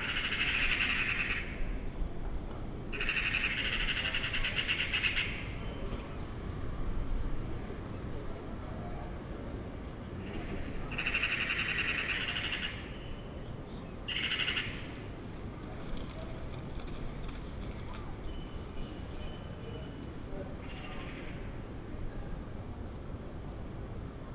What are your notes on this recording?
A little quarrel between Crows and Magpies.